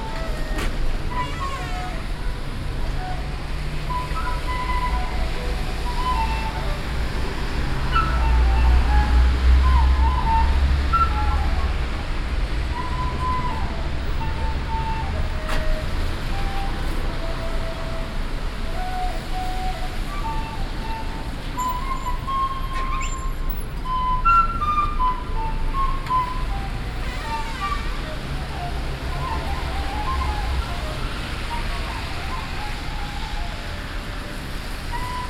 Washington DC, E St NW, Flutist
USA, Virginia, Washington DC, Flutist, Coltrane, My favorite things, Door, Road traffic, Binaural
DC, USA, 16 November 2011